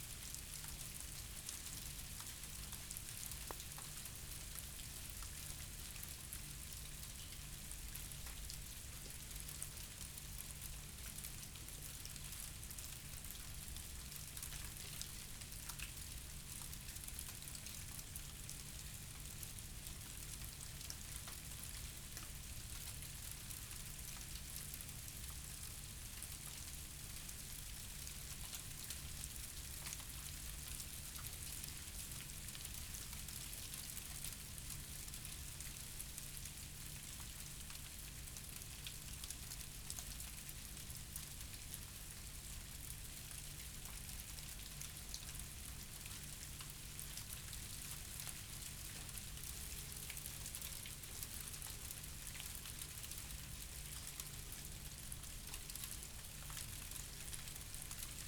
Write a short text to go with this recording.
late night in February, a light ice rain begins, (Sony PCM D50, DPA4060)